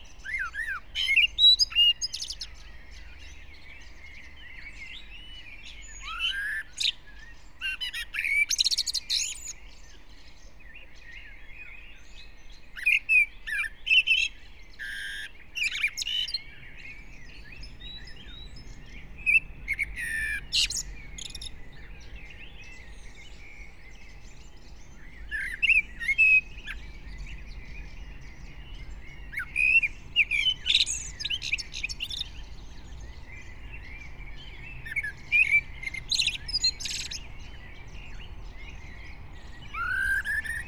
Brno, Lužánky - park ambience
04:30 Brno, Lužánky
(remote microphone: AOM5024/ IQAudio/ RasPi2)